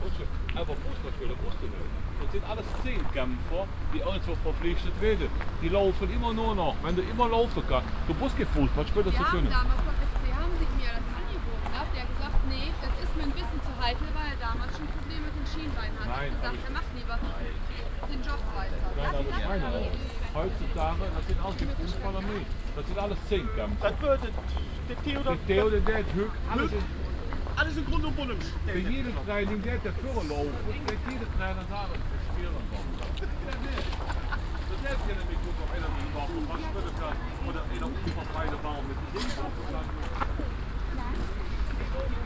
cologne, scheibenstreet, horse race track, parking area, flee market
flee market in the early afternoon - talks and sounds while packing
soundmap nrw: social ambiences/ listen to the people in & outdoor topographic field recordings
19 August 2009, ~14:00